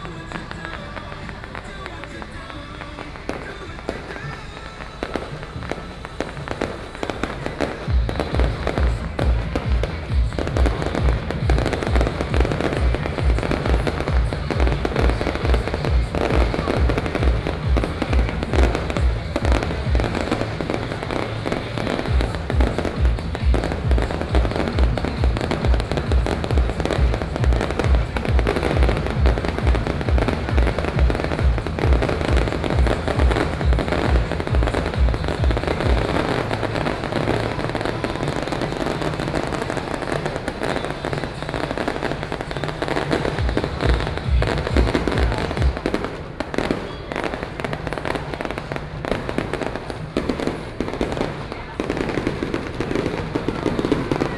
內湖區港富里, Taipei City - firecrackers
Traditional Festivals, The sound of firecrackers
Please turn up the volume a little. Binaural recordings, Sony PCM D100+ Soundman OKM II
Taipei City, Taiwan, 12 April 2014, 20:32